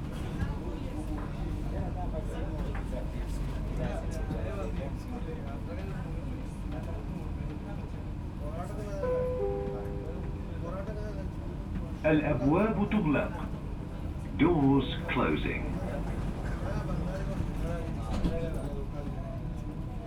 Bur Dubai - Dubai - United Arab Emirates - Dubai Metro - Heading Khalid Bin Al Waleed Station
Interior recording inside the metro cabin, from the Karama Station to one near Burjuman Center.
15 October 2011